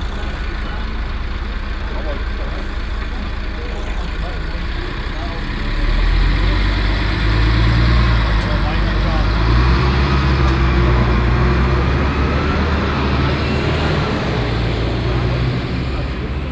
An einer Trinkhalle nage einer Busstation. Der Klang der Stimmen der Stammgäste die davor stehen und ein startender und abfahrender Bus.
At a kiosk. The sound of the voices of the frequenters standing in front and a bus starting and departing.
Projekt - Stadtklang//: Hörorte - topographic field recordings and social ambiences
29 April 2014, 11:50